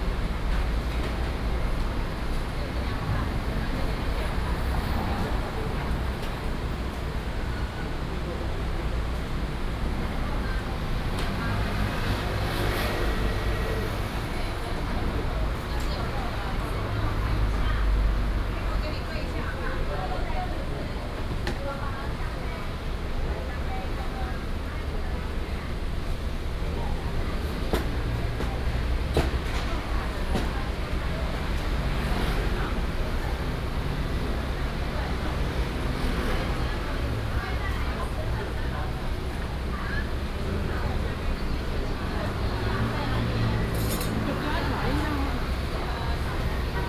Walking on the streets, Traffic Sound, In the corner of the road, Bakery shop